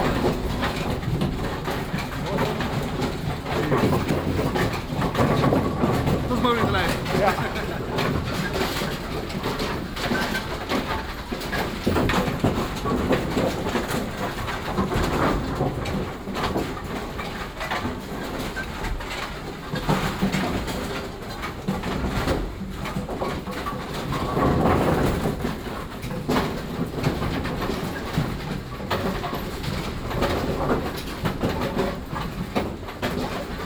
de machinekamer achter de bowlingbanen
the machine room behind the bowling